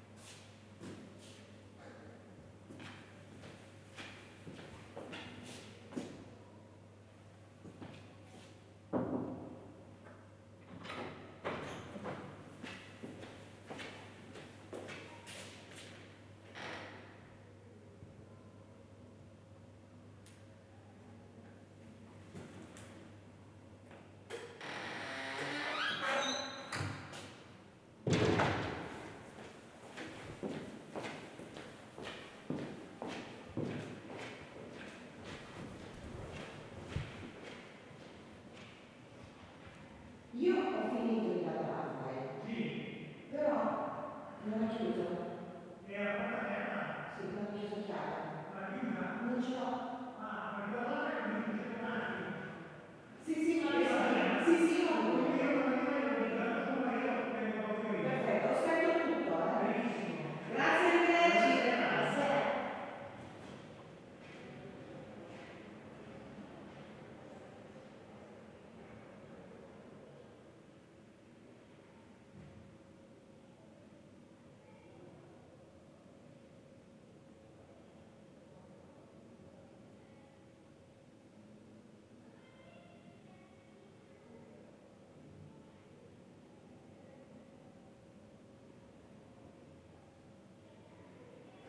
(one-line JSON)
{"title": "Via Maria Ausiliatrice, Torino, Italy - Ambience at Casa Mamma Margherita", "date": "2015-03-18 18:05:00", "description": "Waiting near the reception at Casa Mamma Margherita, a woman closes her office and leaves to go home, her voice echoing down the marble corridor.", "latitude": "45.08", "longitude": "7.68", "altitude": "244", "timezone": "Europe/Rome"}